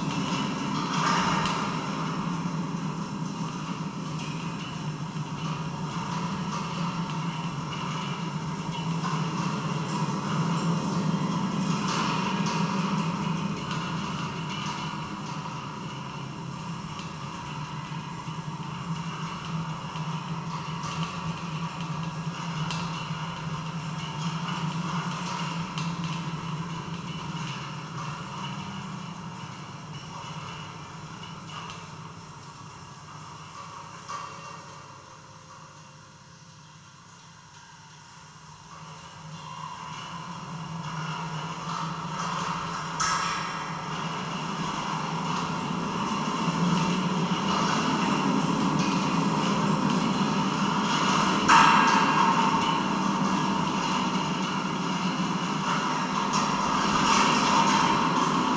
Lithuania, Paluse, fence
wired fence in a wind